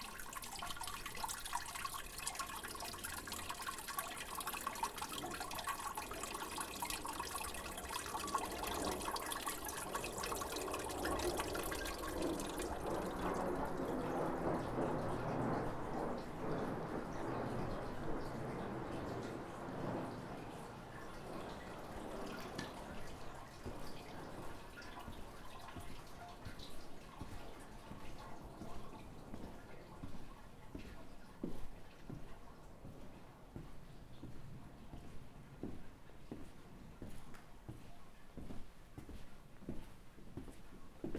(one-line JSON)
{"title": "Gassen in Caviano, Tessin CH", "date": "2010-12-25 14:10:00", "description": "Caviano, Tessin, Kastanienwälder, verwunschene Gassen, Brunnengeplätscher, Schritte, Nachsaisonatmosphäre", "latitude": "46.11", "longitude": "8.77", "altitude": "302", "timezone": "Europe/Zurich"}